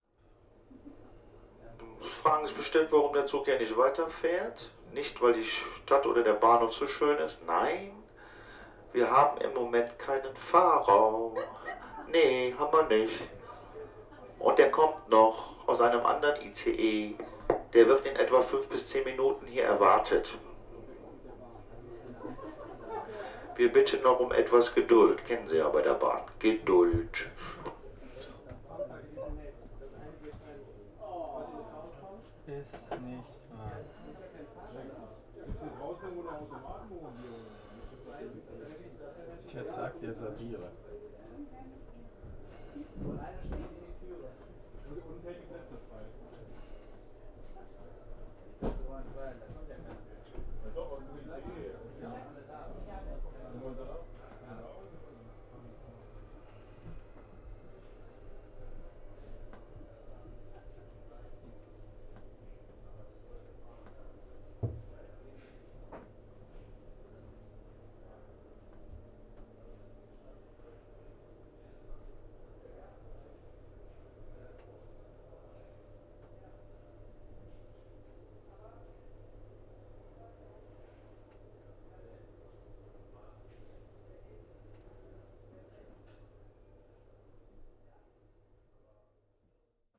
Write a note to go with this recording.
train stop in Hamm after some chaos. it seems difficult to reach Berlin today, due to storms and flood damage. here, we are waiting for a train driver... (SONY PCM D50)